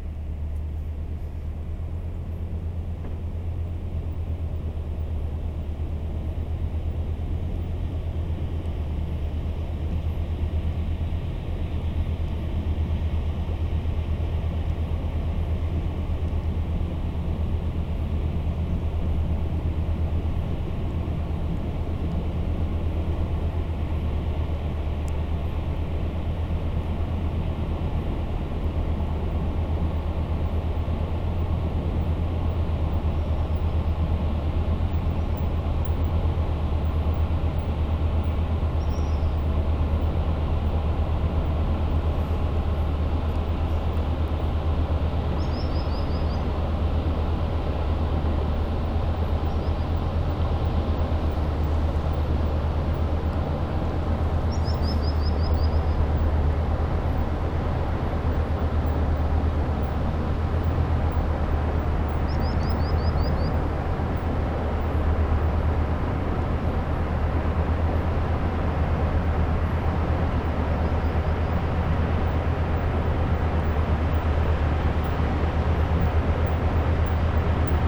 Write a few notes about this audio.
A big industrial boat is passing by on the Seine river, by night. We don't see anything but we ear it.